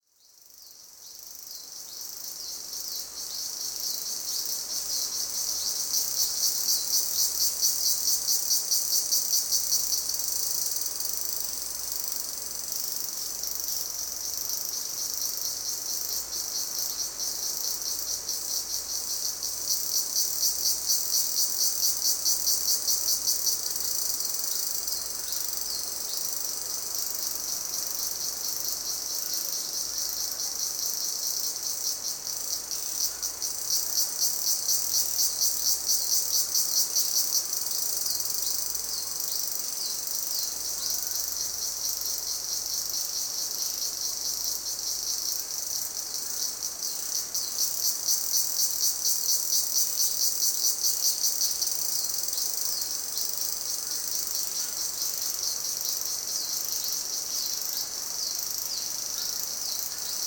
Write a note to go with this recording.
cicadas around noon, Koh Bulone, (zoom h2, binaural)